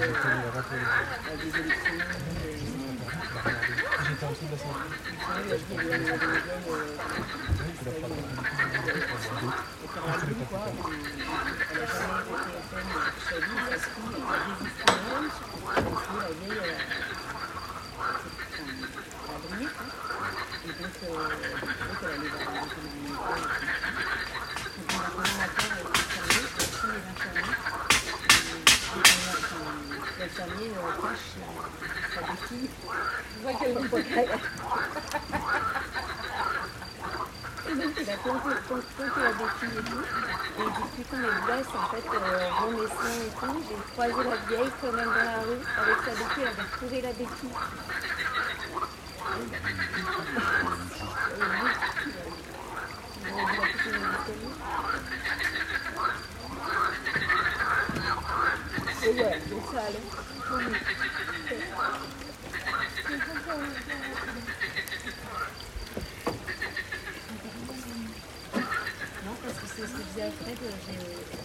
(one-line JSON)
{"title": "Mnt de Verdun, Francheville, France - Frogs in Francheville", "date": "2022-04-30 16:15:00", "description": "The song of frogs in a pond. Presence of a few ducks and a handyman cutting a bicycle frame", "latitude": "45.74", "longitude": "4.77", "altitude": "198", "timezone": "Europe/Paris"}